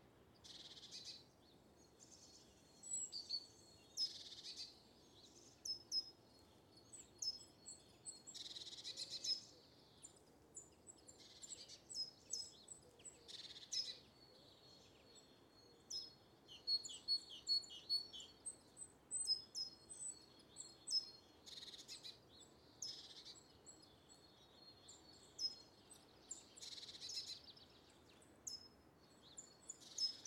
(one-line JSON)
{"title": "Les Clairières, Verneuil-sur-Seine, France - un matin au soleil dhiver à Verneuil", "date": "2020-02-18 00:31:00", "description": "AT4041 couple (ORTF), SD302 preamp, Zoom H6 recorder.\nstarlings, tit, woodpecker, and blackbird taking their breakfast in the grass under the trees in Verneuil.", "latitude": "48.99", "longitude": "1.96", "altitude": "33", "timezone": "Europe/Paris"}